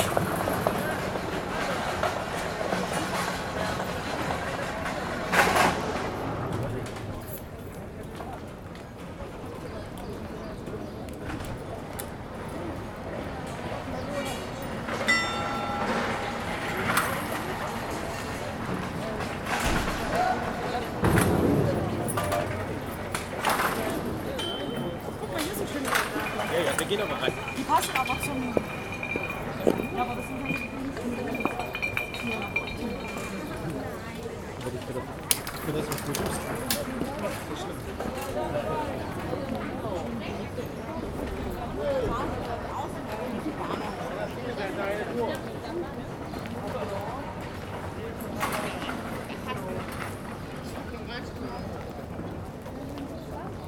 am Marktplatz räumen Händler ihre Stände zusammen und verladen sie auf Transporter und LKWs | on the marketplace traders remove their stalls and load them on trucks and vans
Deutschland, European Union, 18 June 2013, 18:15